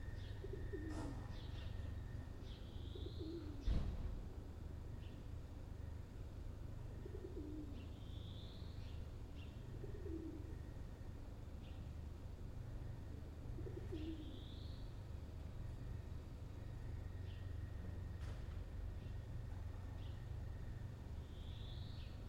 Place du Maréchal Foch, La Rochelle, France - La Rochelle Saturday morning pré-deconfinement?
La Rochelle Saturday morning pré-deconfinement?
it runs this morning at 7 a.m.
4 x DPA 4022 dans 2 x CINELA COSI & rycote ORTF . Mix 2000 AETA . edirol R4pro
France métropolitaine, France, 25 April 2020, 07:10